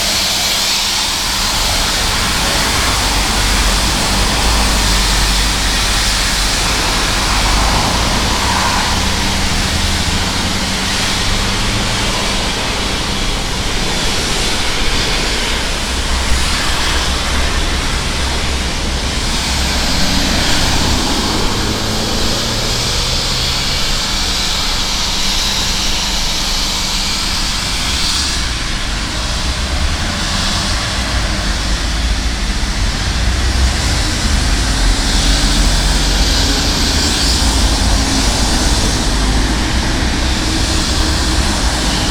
{"title": "Poznan, Niestachowska - overpass works", "date": "2019-08-24 12:54:00", "description": "Attention, loud noise. At the begging you can hear workers fixing high voltage lines over train tracks, talking and listening to the radio. They are working on a platform and the driver signals with a horn when they move to the next section. The tracks are located on a viaduct that is also under repair. Around 1:30 mark the worker starts sanding the base of the viaduct. Even though I was almost a hundred meters away, the sound of the sanding was deafening and drowning the heavy traffic moving below the viaduct. (roland r-07)", "latitude": "52.42", "longitude": "16.89", "altitude": "69", "timezone": "Europe/Warsaw"}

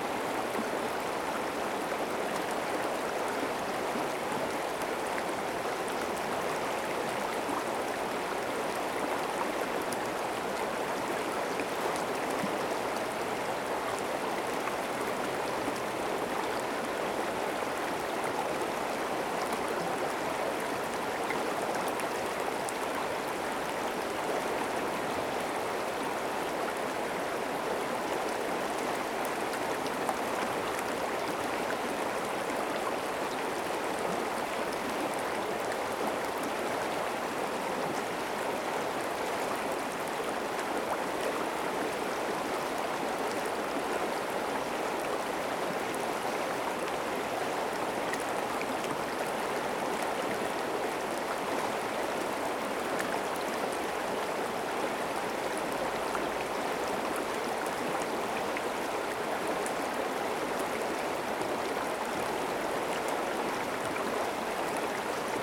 {"title": "River Drava near Varazdin - River flowing", "date": "2020-10-25 16:50:00", "description": "Sounds of river Drava stream. Recorded with Zoom H2n (MS, on a small tripod near the sound source).", "latitude": "46.35", "longitude": "16.33", "altitude": "170", "timezone": "Europe/Zagreb"}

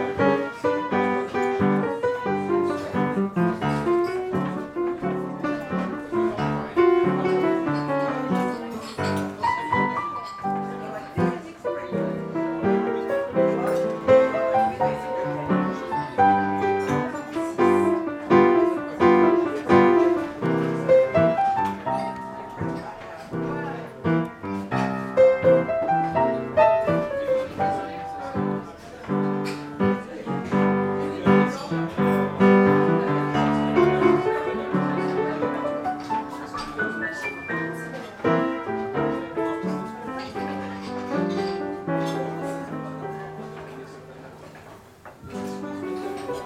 {"title": "Blainville-sur-Mer, France - La Cale", "date": "2014-11-01 14:52:00", "description": "People eating at La Cale restaurant with a piano player, Zoom H6", "latitude": "49.06", "longitude": "-1.60", "altitude": "9", "timezone": "Europe/Paris"}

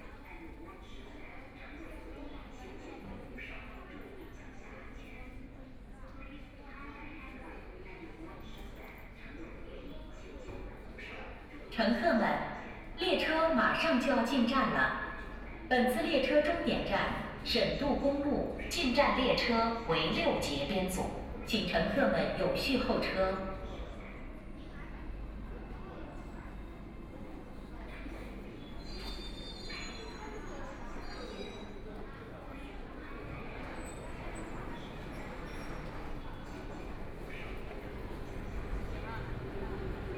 {"title": "Laoximen Station, Shanghai - in the Station", "date": "2013-12-02 13:10:00", "description": "walking in the Laoximen Station, Binaural recordings, Zoom H6+ Soundman OKM II", "latitude": "31.22", "longitude": "121.48", "altitude": "13", "timezone": "Asia/Shanghai"}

